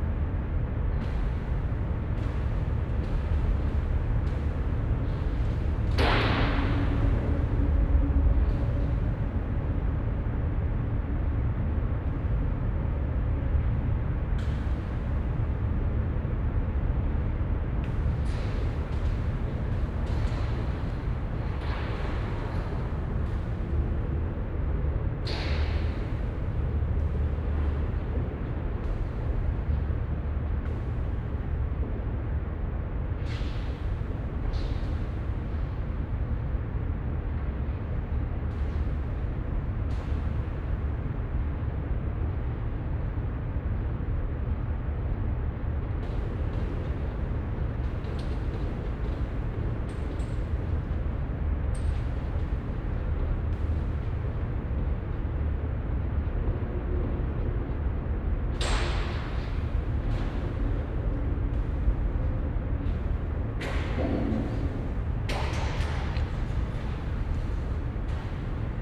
Mannesmannufer, Düsseldorf, Deutschland - Düsseldorf, KIT, end of exhibition hall
Inside the under earth exhibition hall at the end of the hall. The sound of the traffic in the Rheinufertunnel reverbing in the long tube like space while an exhibition setup.
soundmap nrw - sonic states and topographic field recordings